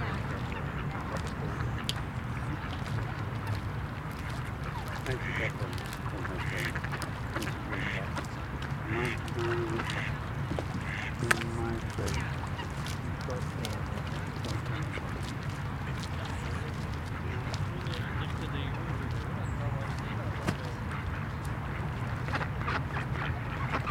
Gouverneur Verwilghensingel, Hasselt, Belgique - Ducks and people
Ducks, people passing by near the pond. Dista, nt drone from the cars nearby.
Tech Note : Sony PCM-D100 internal microphones, wide position.
Limburg, Vlaanderen, België / Belgique / Belgien, 23 October 2021, 16:20